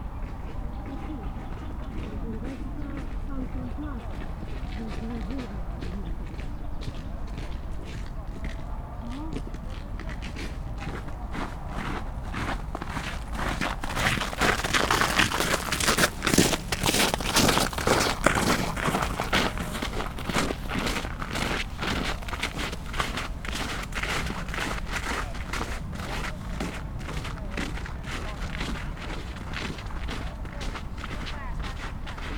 A stroll through Tineretului Park in the early evening of January 21st, 2019: nature sounds combined with traffic hum in the background, police & ambulance sirens, close footsteps and voices of passerby. Using a SuperLux S502 ORTF Stereo Mic plugged into Zoom F8.